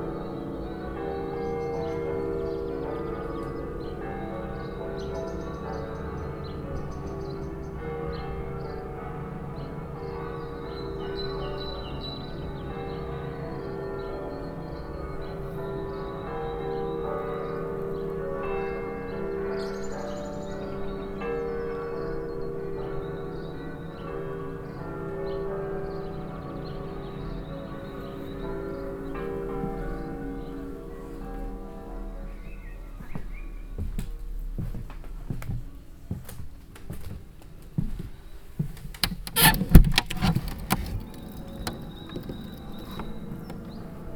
Lange Str., Hamm, Germany - national easter bells...
Easter bells of all the churches synchronized under pandemic... (the bell nearby, beyond corona, just happens to be in need of repair… )
Nordrhein-Westfalen, Deutschland, 12 April, ~10:00